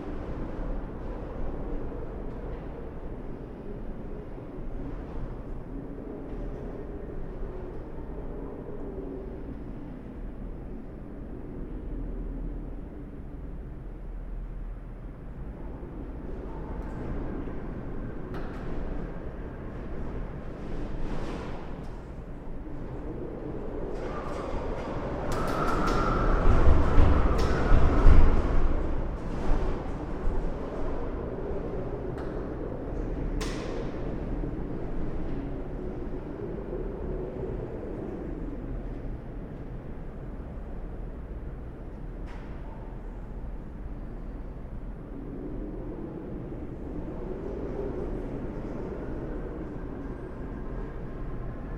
CSW stairwell in windstorm, Torun Poland
creaking of the stairwell structure during a wind storm, Center of Contemporary Art Torun
7 April 2011